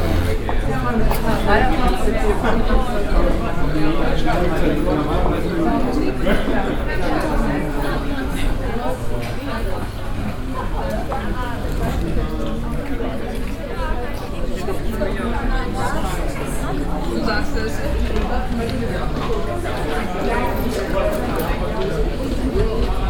cologne, unter krahnenbäumen, music school
inside the cologne music school - students at the cafetaria, steps and conversations, a signal bell, rehearsal rooms
soundmap d: social ambiences/ listen to the people - in & outdoor nearfield recordings
19 June, ~13:00